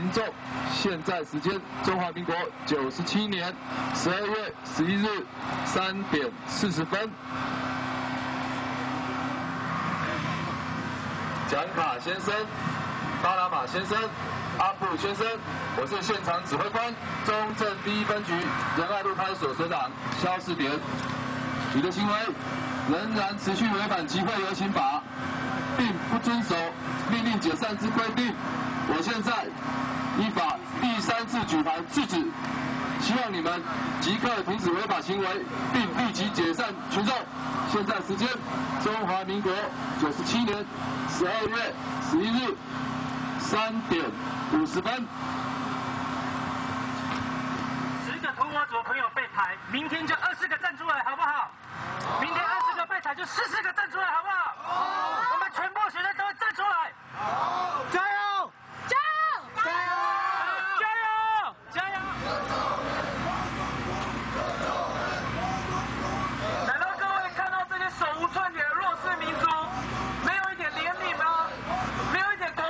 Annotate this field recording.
Police are working with protesting students confrontation, Sony ECM-MS907, Sony Hi-MD MZ-RH1